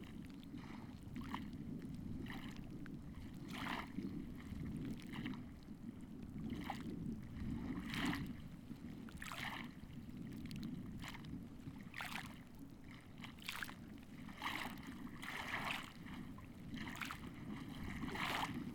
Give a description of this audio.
microphones on the ground of lakeshore: small omni and geophone with spike...